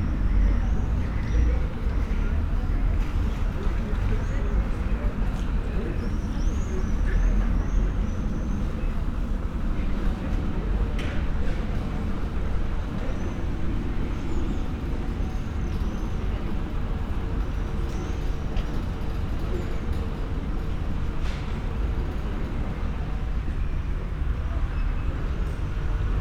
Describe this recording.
pedestrians, two women talking, construction works in the distance, the city, the country & me: june 18, 2013